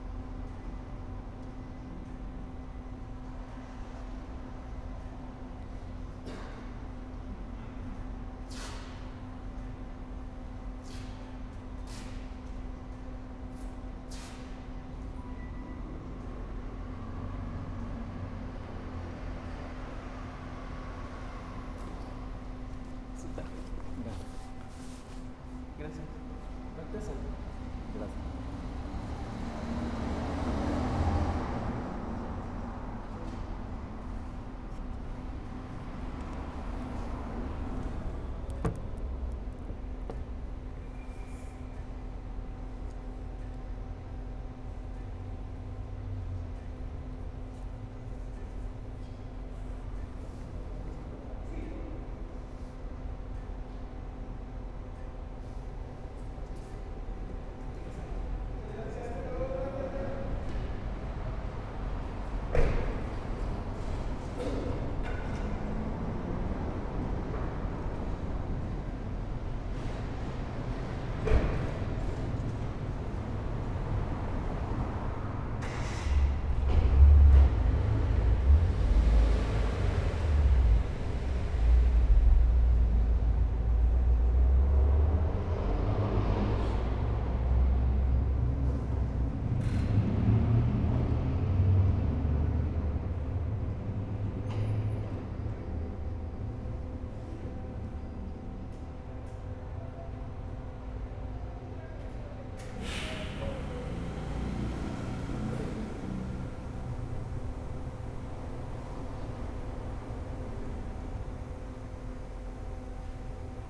España, Moderna, León, Gto., Mexico - Verificación vehicular.
It is a program aimed at controlling polluting emissions by inspecting these emissions directly in vehicles through electronic probes and bands, for subsequent approval or rejection. A center responsible for performing this operation is commonly called Verificentro.
I made this recording on February 1, 2020 at 14:07
I used a Tascam DR-05X with its built-in microphones and a Tascam WS-11 windshield.
Original Recording:
Type: Stereo
Se trata de un programa dirigido al control de las emisiones contaminantes mediante la inspección de dichas emisiones directamente en los vehículos a través de sondas y bandas electrónicas, para su posterior aprobación o rechazo. Un centro encargado de realizar esta operación es comúnmente llamado Verificentro.
Esta grabación la hice el 1 de febrero 2020 a las 14:07
Usé una Tascam DR-05X con sus micrófonos incorporados y un parabrisas Tascam WS-11.
Guanajuato, México, 1 February 2020, ~14:00